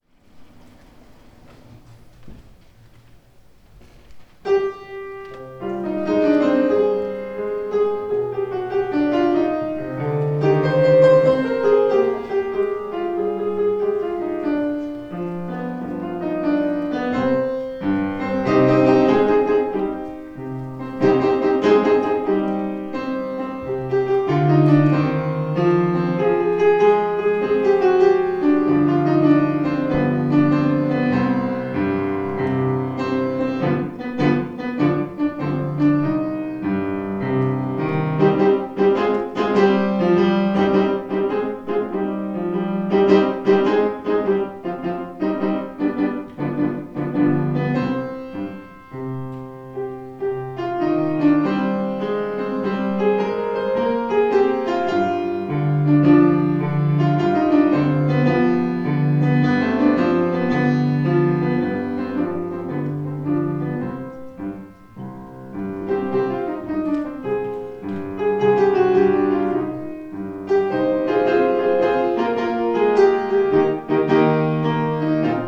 berlin, am zeughaus: zeughauskino - the city, the country & me: cinema of the german historical museum
eunice martins accompanies the silent film "lieb vaterland, magst ruhig sein" (1914) on the piano
the city, the country & me: january 30, 2014